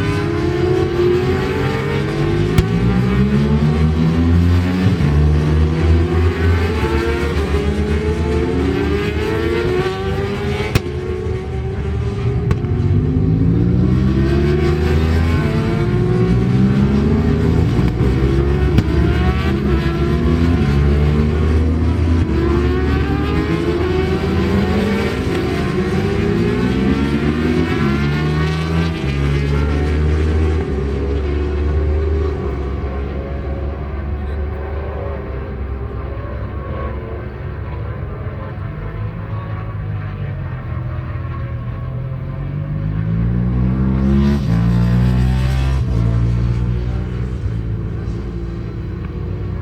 {"title": "Unit 3 Within Snetterton Circuit, W Harling Rd, Norwich, United Kingdom - British Superbikes 2000 ... superbikes ...", "date": "2000-06-25 10:00:00", "description": "British Superbikes 2000 ... warm-up ... Snetterton ... one point stereo mic to minidisk ...", "latitude": "52.46", "longitude": "0.95", "altitude": "41", "timezone": "Europe/London"}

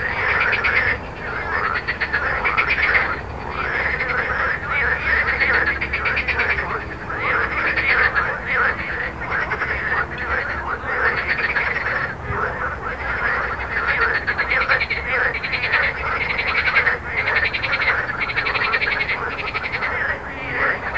{"title": "Jardins de la Ligne, Rue Jacqueline Auriol, Toulouse, France - Frog Montaudran", "date": "2021-05-22 15:00:00", "description": "Frog and road\ncaptation zoom h4n", "latitude": "43.57", "longitude": "1.48", "altitude": "153", "timezone": "Europe/Paris"}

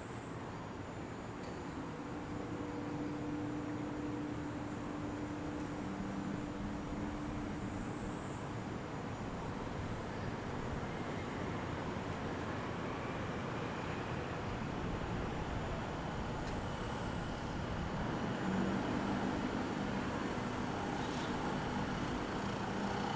{"title": "林口社宅Ｂ區中庭 - 住戶唱卡拉OK聲", "date": "2021-08-22 14:20:00", "latitude": "25.08", "longitude": "121.38", "altitude": "252", "timezone": "Asia/Taipei"}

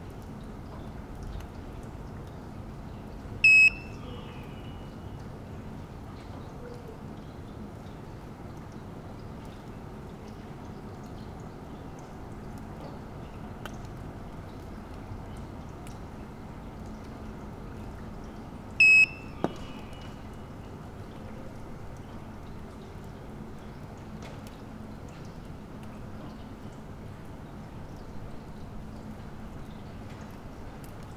{"title": "Gr.Märkerstr., Halle (Saale), Deutschland - silent street, communicating devices", "date": "2016-10-24 21:15:00", "description": "A silent street on a rainy and cold Monday evening. Devices with unclear funtion seem to communicate, it looks like a locking system. After 2min it triples its frequency\n(Sony PCM D50, internal mics)", "latitude": "51.48", "longitude": "11.97", "altitude": "94", "timezone": "Europe/Berlin"}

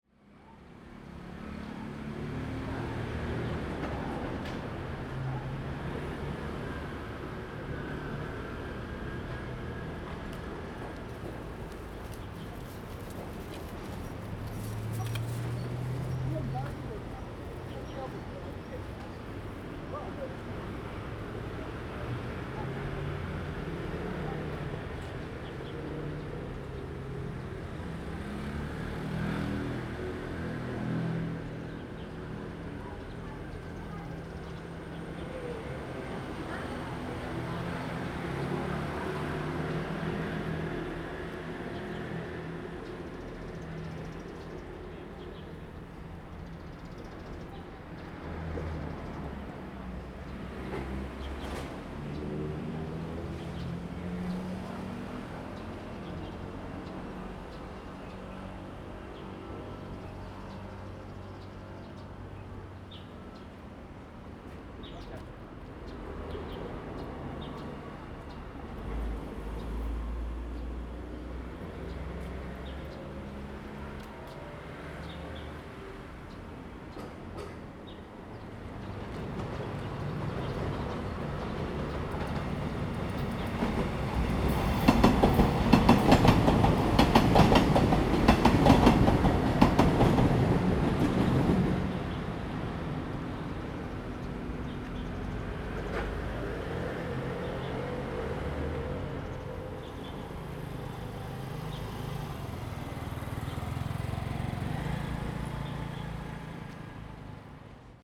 {"title": "Jiahou Rd., Dajia Dist., Taichung City - Next to the railway", "date": "2017-03-24 15:31:00", "description": "Next to the railway, Traffic sound, The sound of birds, The train runs through\nZoom H2n MS+XY +Spatial Audio", "latitude": "24.35", "longitude": "120.63", "altitude": "58", "timezone": "Asia/Taipei"}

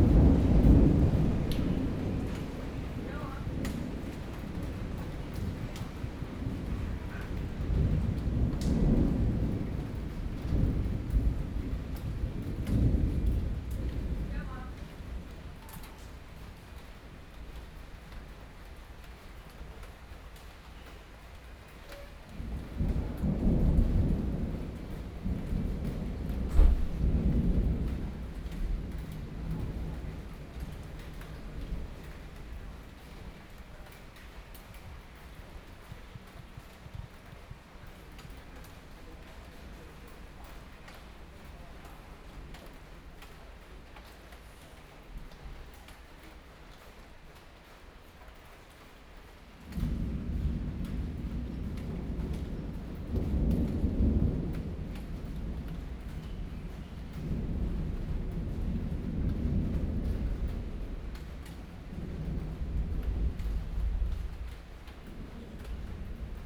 {"title": "Beitou - Thunderstorm", "date": "2013-06-04 12:37:00", "description": "Thunderstorm, Zoom H4n+ Soundman OKM II +Rode NT4", "latitude": "25.14", "longitude": "121.49", "altitude": "23", "timezone": "Asia/Taipei"}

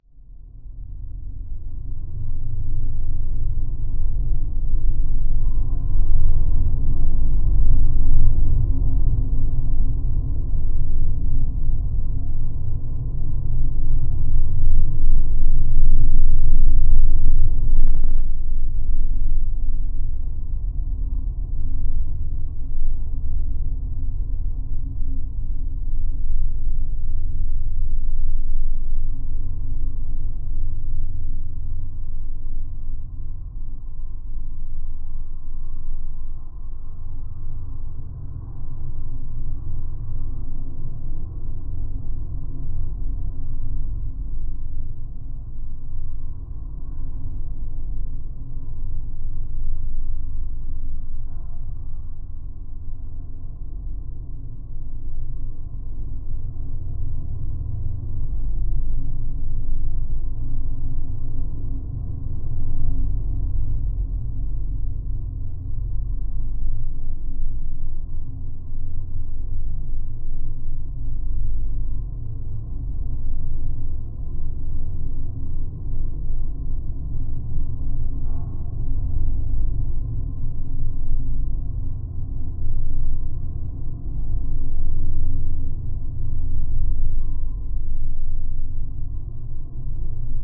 {"title": "Bijeikiai, Lithuania, view tower", "date": "2021-05-08 18:20:00", "description": "View tower listened through geophone", "latitude": "55.48", "longitude": "25.27", "altitude": "156", "timezone": "Europe/Vilnius"}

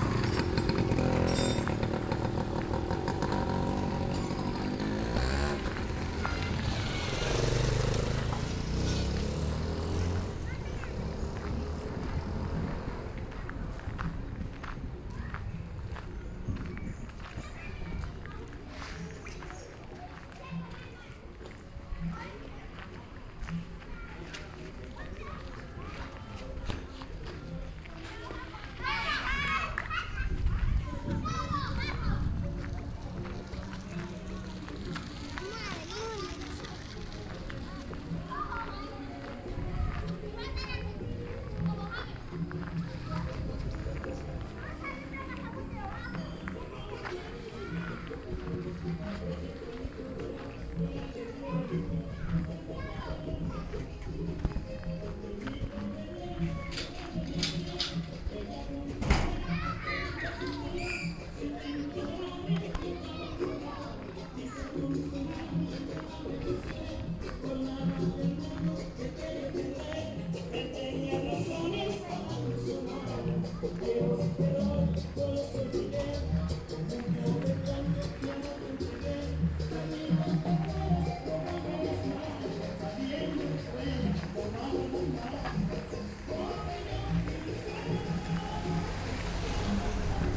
{"title": "Chigorodó, Chigorodó, Antioquia, Colombia - Plaza de Chigorodó y alrededores", "date": "2014-12-05 16:22:00", "description": "Soundwalk around Chigorodó's market place.\nBy the time the recording was made the market was already closing down. There wasn't any pre-established route. It was more a derive exercise in which I followed my ears everywhere.\nZoom H2n with a DIY stereo headset with Primo E172 mic capsules.\nThe entire collection of Chigorodó's recordings on this link", "latitude": "7.67", "longitude": "-76.68", "altitude": "34", "timezone": "America/Bogota"}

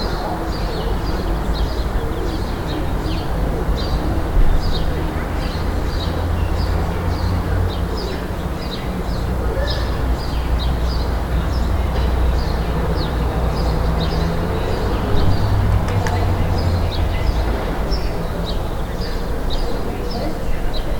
{"title": "Ein Tag an meinem Fenster - 2020-03-27", "date": "2020-03-27 15:05:00", "latitude": "48.61", "longitude": "9.84", "altitude": "467", "timezone": "Europe/Berlin"}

